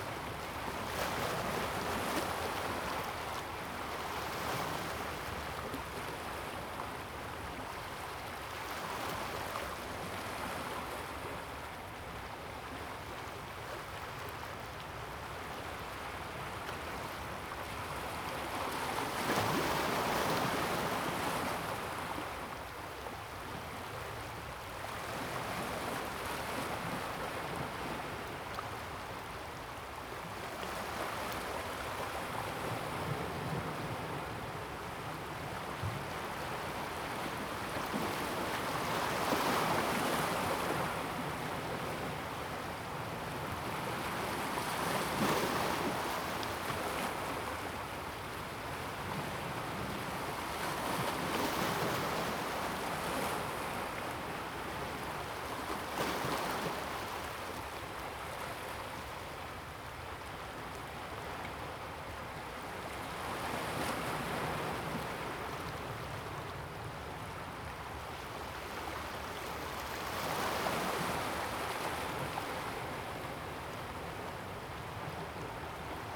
大屯溪, New Taipei City, Taiwan - In the river and the waves interchange
Sound of the waves, Stream, In the river and the waves interchange
Zoom H2n MS+XY